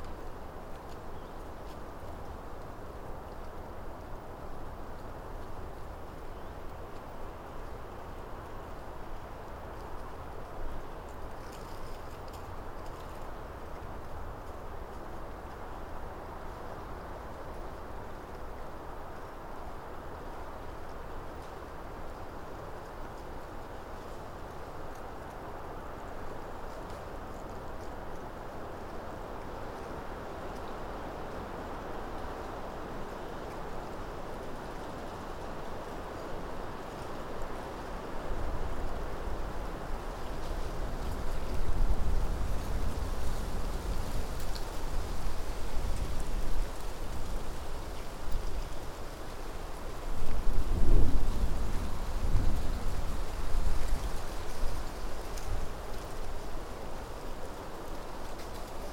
Late evening recording at Birchen Copse on the edge of Woodcote. The recording is underpinned by the movement of the woodland canopy in the wind, the quiet rumbling drone of traffic on the A4074, trains on the Reading to Oxford mainline and planes high overhead. Piercing this are the rustles of small animals nearby, the creaking of trees in the breeze and an owl further into the woodland. Recorded using a spaced pair of Sennheiser 8020s at head height on an SD788T.
Reading, UK, 9 April 2017